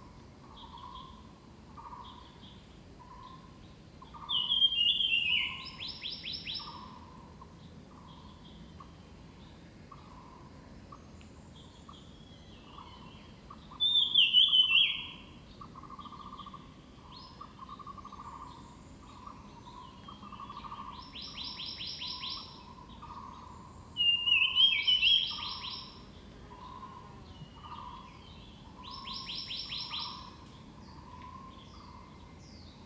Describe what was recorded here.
Birds called, In the woods, Bell, Zoom H2n MS+XY